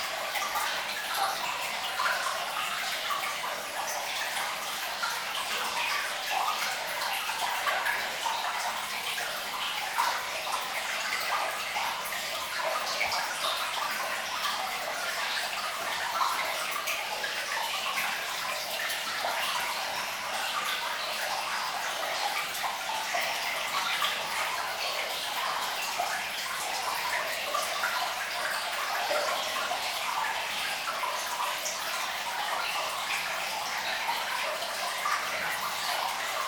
In the Saint-Georges d'Hurtières underground mine, water is quietly flowing.